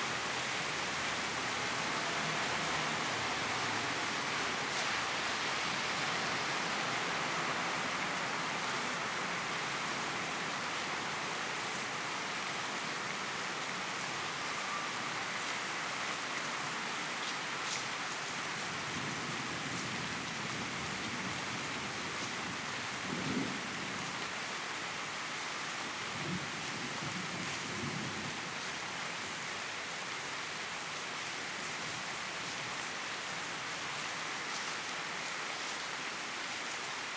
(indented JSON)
{
  "title": "10 Tierney Road - 5am Recording of Thunder, Rain and General Ambience.",
  "date": "2018-07-31 05:00:00",
  "description": "Not the best recording (setup in a hurry, to record the thunder before it passed) using a shotgun microphone sticking out the window. It was the first microphone on hand and I was half asleep and as I said...in a hurry!",
  "latitude": "51.44",
  "longitude": "-0.13",
  "altitude": "51",
  "timezone": "Europe/London"
}